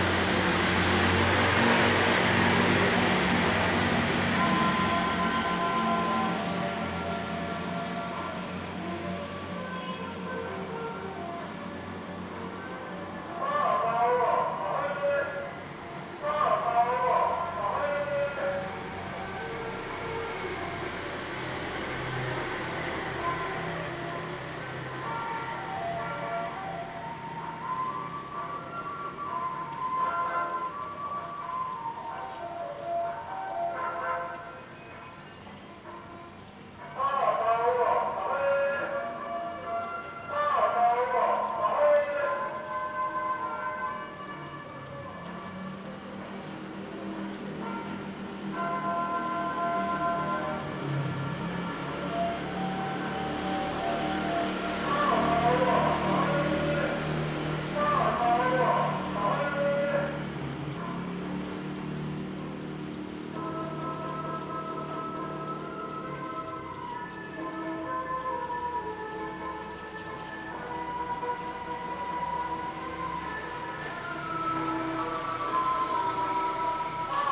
大仁街, Tamsui District, New Taipei City - Vendors Publicity
Old street, Traffic Sound, Vendors Publicity
Zoom H2n MS+XY
New Taipei City, Taiwan, March 2016